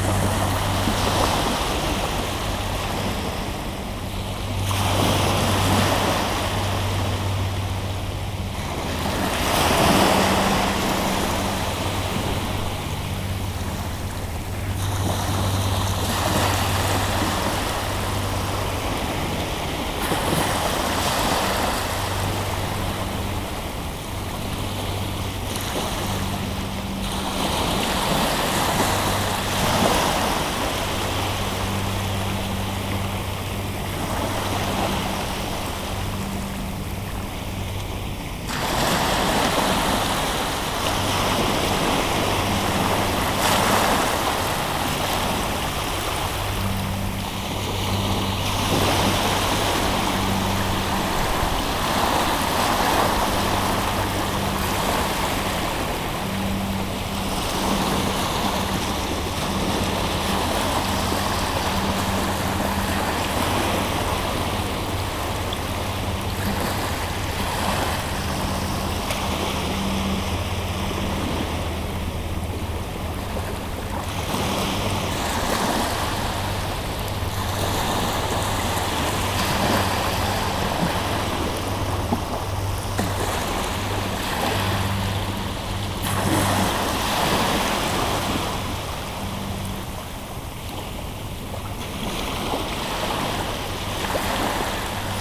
Knokke-Heist, Belgique - The sea
Quiet recording of the sea during the beginning of the low tide, in the hoopoe district of Knokke called Het Zoute.
15 November 2018, 09:00